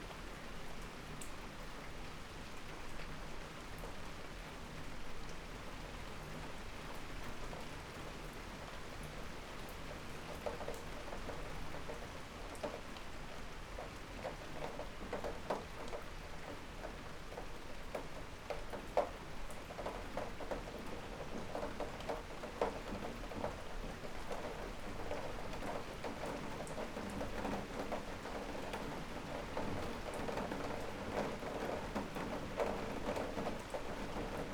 Storm, Malvern, UK
A real-time experience of a storm front that crossed England from the south, the right side of the sound image. The recorder was inside my garage with the metal door open in the horizontal position catching the rain drops. I can be heard in the first moments frantically sweeping out the flood water flowing down the drive from the road above. This is unashamedly a long recording providing the true experience of a long event.
The recorder and microphones were on a chair up under the door to avoid the gusty rain and protected inside a rucksack. The mics were in my home made faux fur wind shield. I used a MixPre 6 II with 2 sennheiser MKH 8020s.